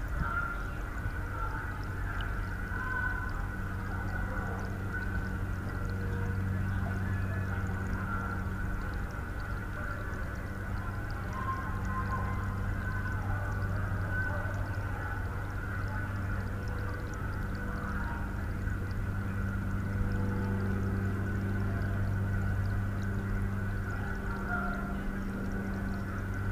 Stolbergallee, Paderborn, Deutschland - Unter Wasser am Rothebach
where
you are not supposed
to go
but unter
the most beautiful play of
water and light
a bridge
between
pleasure and pleasure
even the dogs
won't notice you